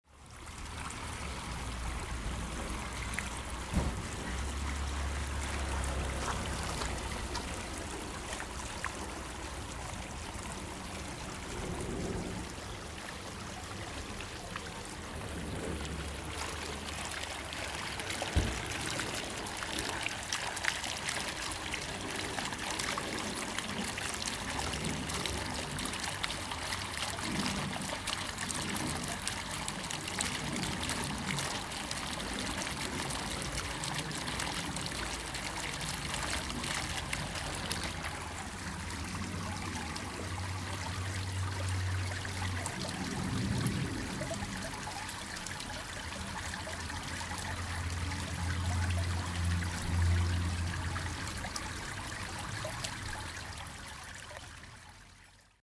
Ruppichteroth, in front of DIY store
at the entrance of the DIY store: different small artifical fountains, water stones etc.
recorded july 1st, 2008.
project: "hasenbrot - a private sound diary"
Germany